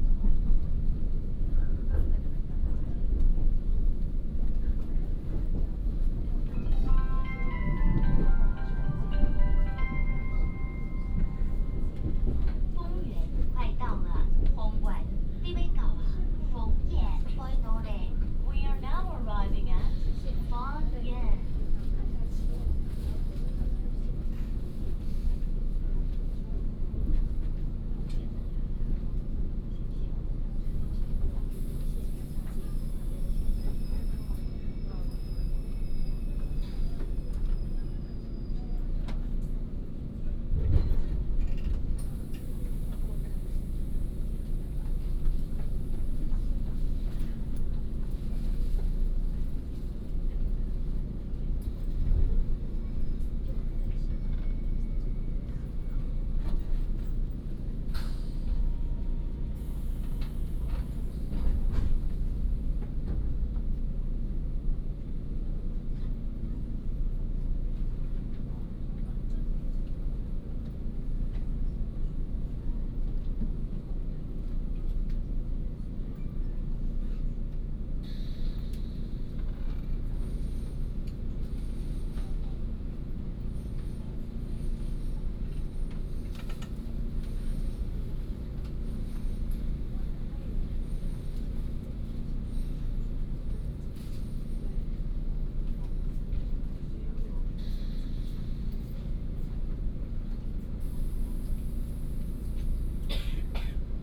Fengyuan Dist., Taichung City, Taiwan - Train compartment
Train compartment, Messages broadcast vehicle interior